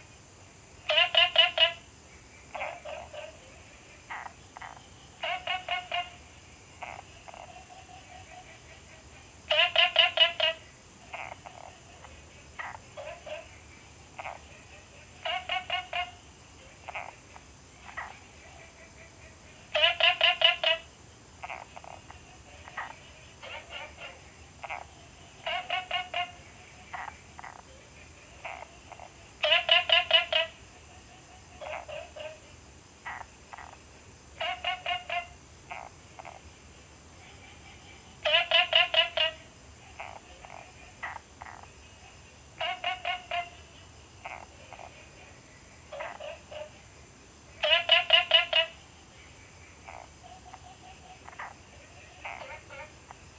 青蛙ㄚ 婆的家, Puli Township - Frog chirping
Frog calls, Small ecological pool
Zoom H2n MS+XY